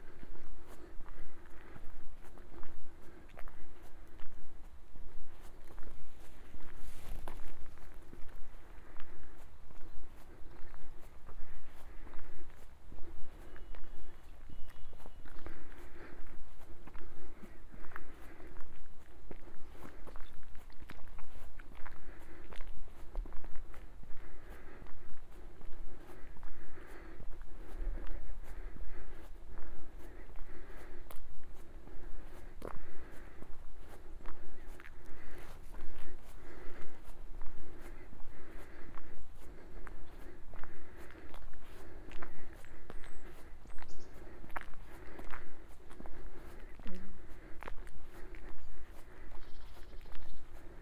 “Sunsetsound 2020, Levice” a soundwalk in four movements: September 5th & December 21st 2020. SCROLL DOWN FOR MORE INFOS - “Sunsetsound 2020, Levice” a soundwalk in four movements: third Movement
Cuneo, Piemonte, Italia, December 2020